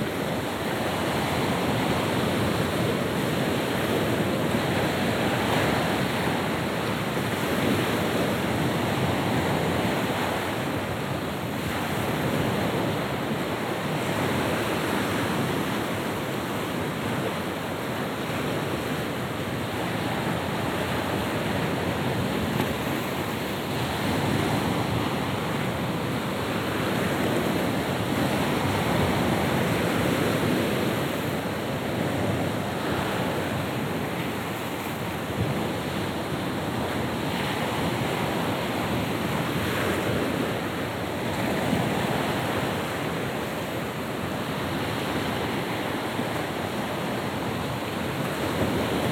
Storm. The howling wind.
Штормит, вой ветра.
June 2015